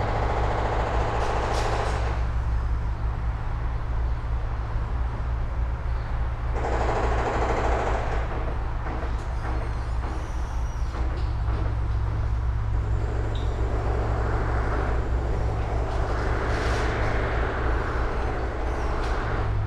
This is another recording of the building work going on across the river from me, completion is due to be Autumn 2019, I will have been driven insane by then...Sony M10 Boundary Array.